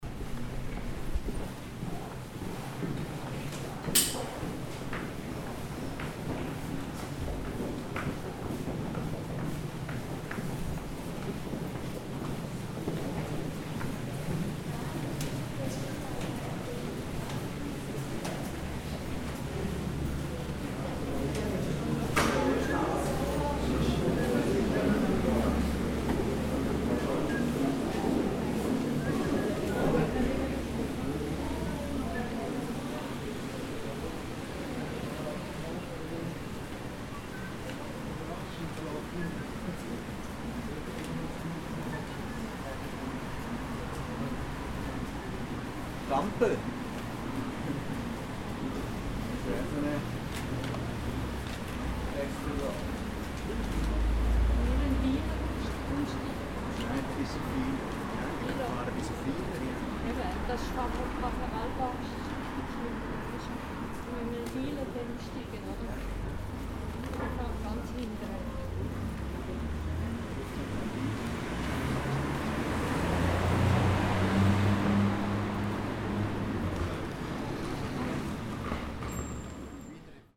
Goppenstein, Umsteigen in Alpenbus in Richtung Lötschental
Umsteigen ins Lötschental, das Tal im sonnigen Wallis und mit dem besonderen Dialekt, wie Nebel alias Geifetsch, tönt schon nach Nebel nicht?
Ferden, Schweiz, 8 July 2011